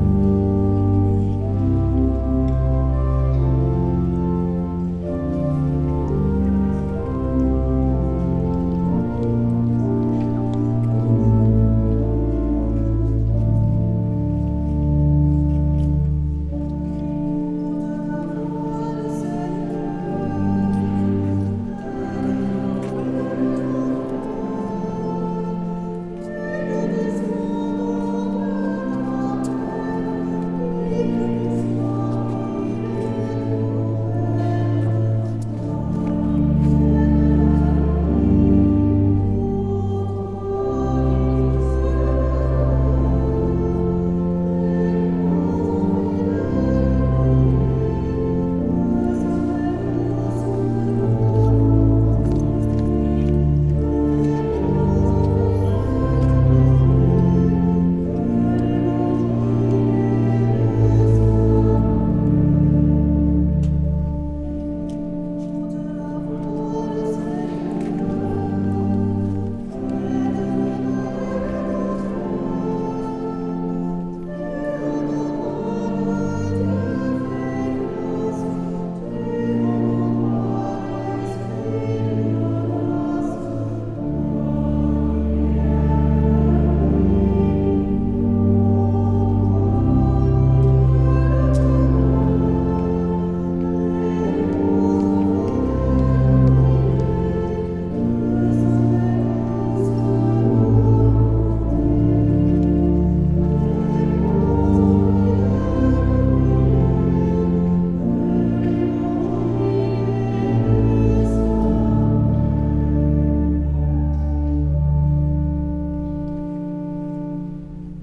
Tours, France - Mass in the cathedral
The afternoon mass in the Saint-Gatien cathedral. It's only the beginning of the mass as it's quite soporific.